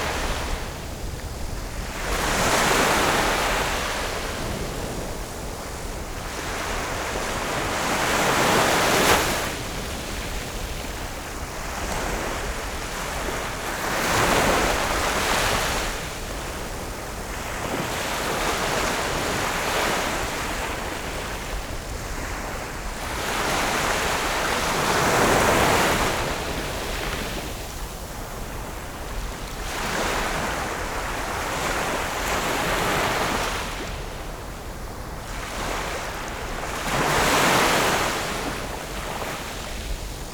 Sanzhi, New Taipei City - The sound of the waves